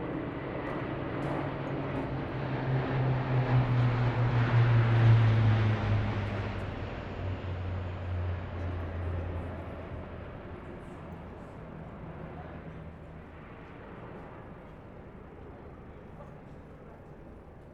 Recording of Royal Air Force 100th Anniversary Flyover, Tudor Road, Hackney, 10.07.18. Starts off with quieter plane formations, building to very loud.